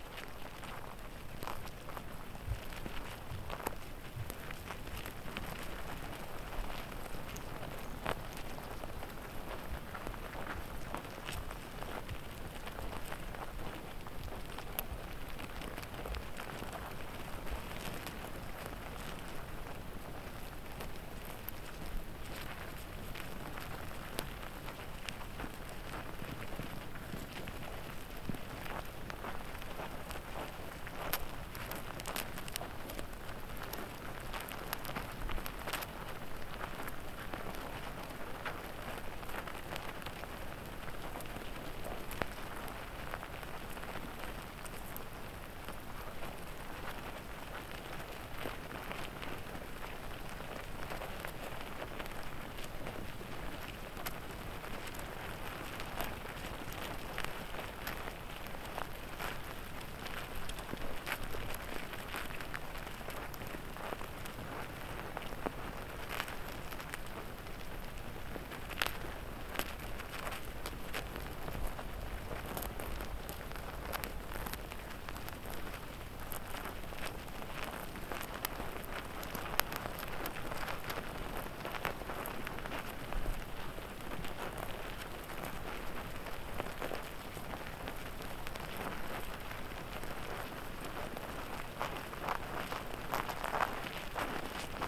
Šventupys, Lithuania, anthill activity
Anthill activity recorded with diy "stick" contact microphone